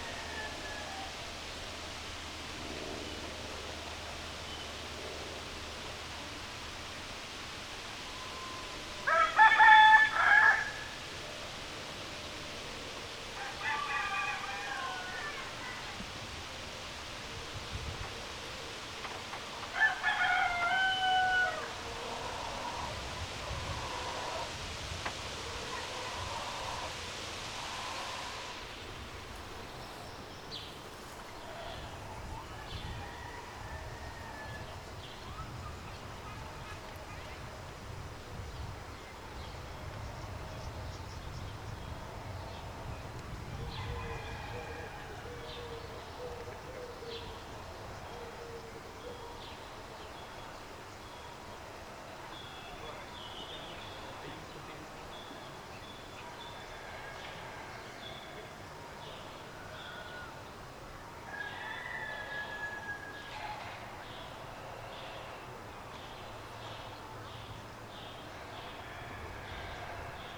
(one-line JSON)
{"title": "Wil, Switzerland - Afternoon relaxing with chickens and passing trains", "date": "2012-05-19 17:24:00", "description": "Awaiting soundcheck at Gare de Lion, Wil, Switzerland. Recorded on an Audio Technica AT815ST with a m>s setting and later reconnected with Waves S1 Imager plugin.", "latitude": "47.46", "longitude": "9.03", "altitude": "567", "timezone": "Europe/Zurich"}